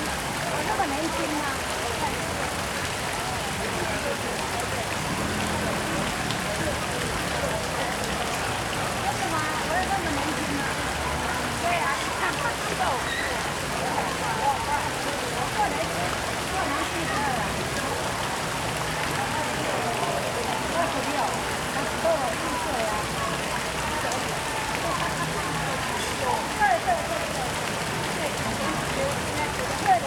Ln., Dayong St., Zhonghe Dist., New Taipei City - Flow sound
Flow sound, Next to the park and the traditional market
Sony Hi-MD MZ-RH1 +Sony ECM-MS907